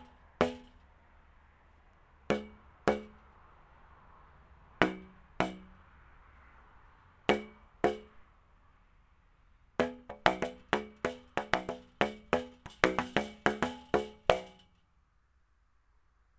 hoscheid, sound object, plöpp orgel
A part of the Hoscheid Klangwanderweg - sentier sonore is this sound object by Michael Bradke entitled Plöpp Orgel.
Its a steel instrument consisting out of 5 bowed and tuned tubes, that can be played with a pair of flip flop shoes.
Hoscheid, Klangobjekt, Plöpporgel
Dieses Klangobjekt von Michael Bradke mit dem Titel Plöpporgel ist ein Teil des Klangwanderwegs von Hoscheid. Es ist ein Stahlinstrument, das aus 5 gebogenen und gestimmten Röhren besteht, das mit einem Paar von Flip-Flop-Schuhen gespielt werden kann.
Mehr Informationen über den Klangwanderweg von Hoscheid finden Sie unter:
Hoscheid, élément sonore, orgue de Plöpp
Cet objet acoustique de Michael Bradke intitulé l’Orgue de Plöpp fait partie du Sentier Sonore de Hoscheid.
C’est un instrument constitué de 5 tubes tordus et accordés qui peut être joué avec une paire de chaussures flip-flop.
Informations supplémentaires sur le Sentier Sonore de Hoscheid disponibles ici :